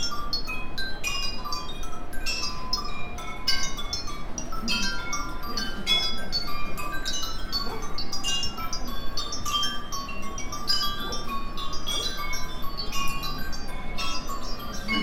Centre Pompidou, Paris. Paris-Delhi-Bombay...
A soundwalk around the Paris-Delhi-Bombay... exhibition. Part 1